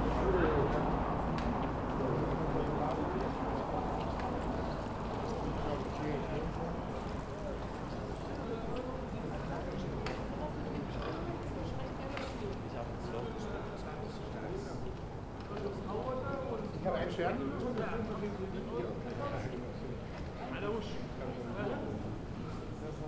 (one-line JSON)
{"title": "Berlin: Vermessungspunkt Friedelstraße / Maybachufer - Klangvermessung Kreuzkölln ::: 24.06.2010 ::: 01:35", "date": "2010-06-24 01:35:00", "latitude": "52.49", "longitude": "13.43", "altitude": "39", "timezone": "Europe/Berlin"}